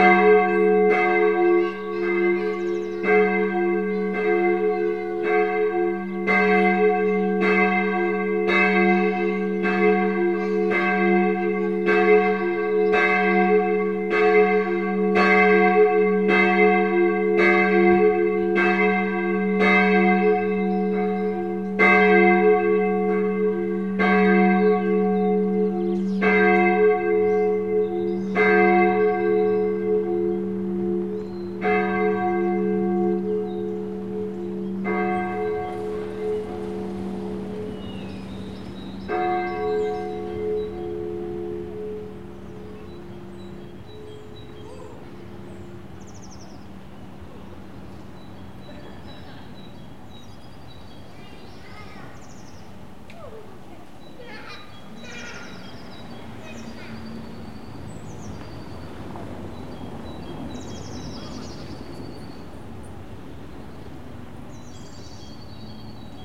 Stubenrauchstraße, Eichwalde, Deutschland - Evangelische Kirche
Evangelische Kirche, Zoom H4n, Geläut Sonntagsgottesdienst